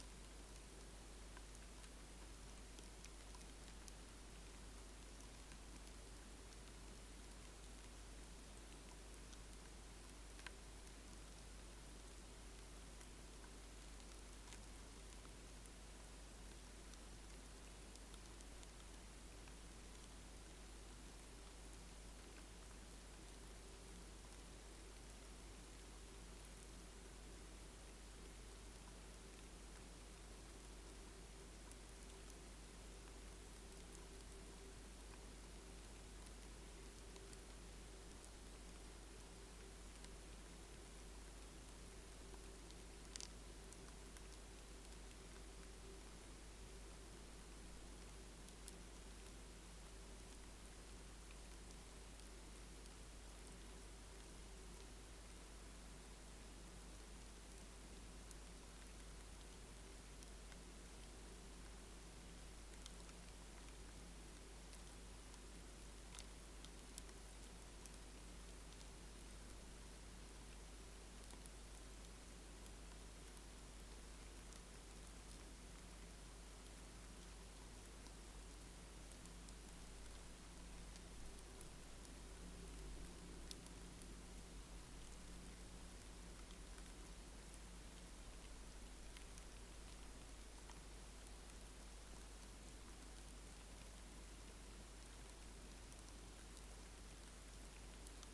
Our living room, Katesgrove, Reading, UK - silkworms in the living room
I have been raising silkworms to better understand the provenance of silk textiles: this is in preparation for a Sonic Trail I am producing for TATE Modern, which will accompany an exhibit by Richard Tuttle, made of silk, viscose and modal.
I have about 100 silkworms which I ordered online a fortnight ago. They love the leaves from our Mulberry tree and are growing well on a diet of them, washed and freshly picked. I have been experimenting with the best ways of recording the sounds of these silkworms; the main sounds are of their tiny claspers (feet) moving on the coarse leaves, and of their tiny jaws chowing down.
You would not believe how many leaves these little comrades can eat! This recording experiment was done at midnight by switching off all the buzzy electronics in the room and lowering my sound professional binaural microphones into the silkworms' container so they hung right beside the worms.